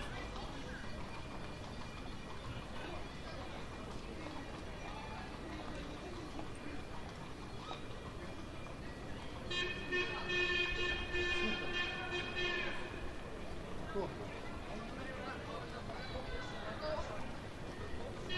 l'viv, prospekt svobody - sunday walk across the boulevard
the odd sounds at the final part of the recording are actually coming from traffic lights